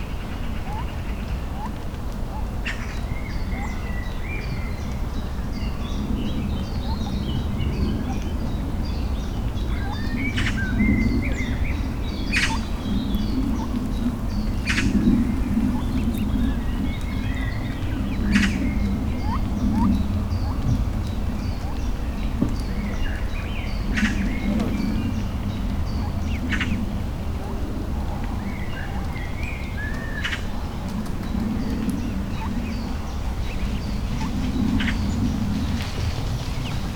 Poznan, Rusalka lake - ducklings
a flock of ducklings orbiting their mother. their squawking is not so obvious among rich sounds of nature around the lake and noise of the surrounding city.